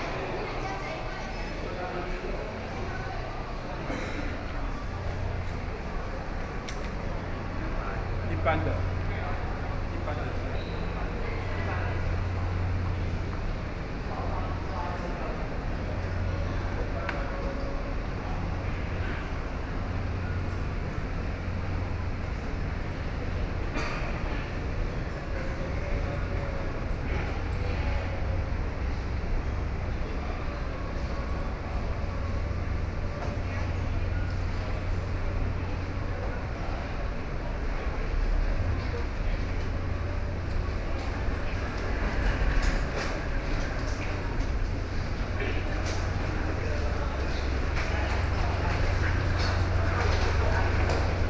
Hualien Station, Taiwan - walk in the Station
Through the underground passage to the platform, Sony PCM D50 + Soundman OKM II